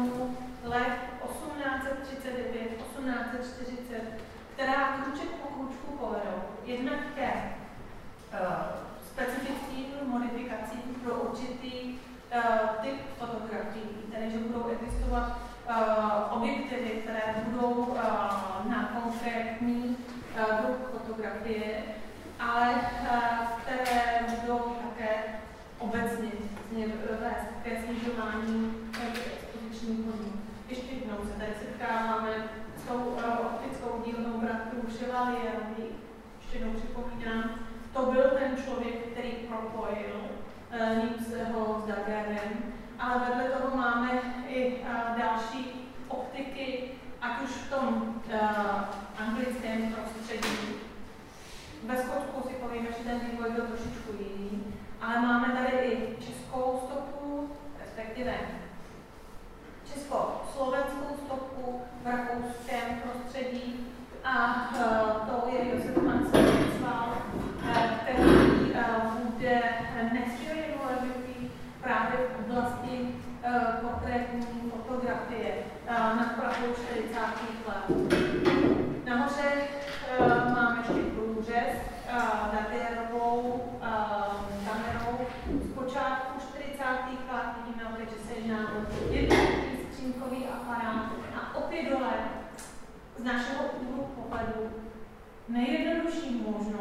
Pasteurova, Ústí nad Labem-Ústí nad Labem-město, Česko - Lecture History of Photograph No.1
Lecture. History of photograph_ No.1 Room 420
2 October 2017, 14:00